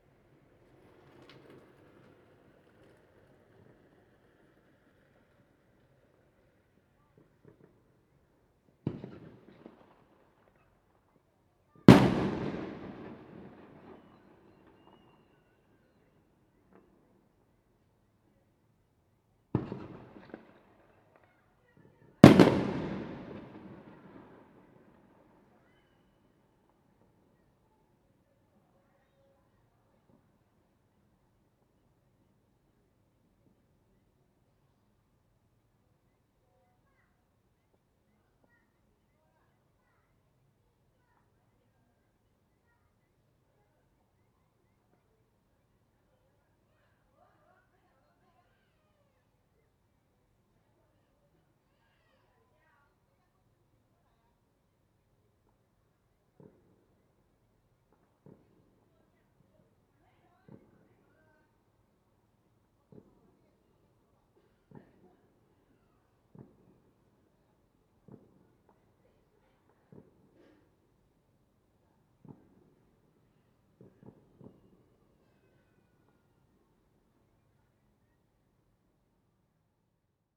Fanshucuo, Shuilin Township - Fireworks sound
Fireworks sound, Firecrackers, Small village, Traditional New Year
Zoom H2n MS +XY
10 February 2016, 20:10